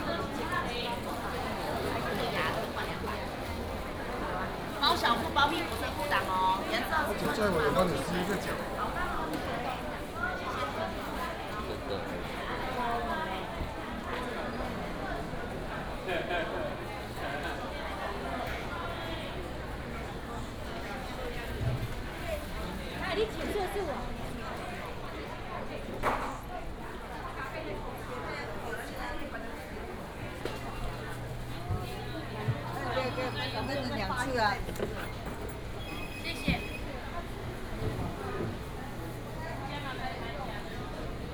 Xiangshang Market, West Dist., Taichung City - Walking through the market
Walking through the market, Traffic sound, The vendor sells sound